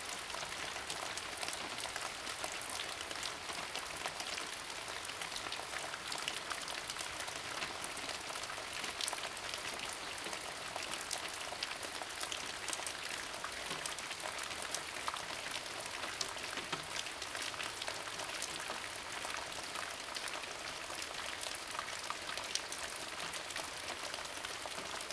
early morning, Rain sound, Many leaves on the ground
Zoom H2n MS+XY
14 September 2016, 05:59